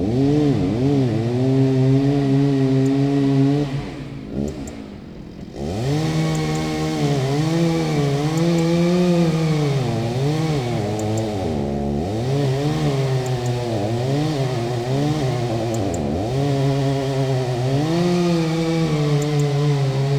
wood cutting with a chainsaw
the city, the country & me: august 3, 2011
Berlin, Germany, 3 August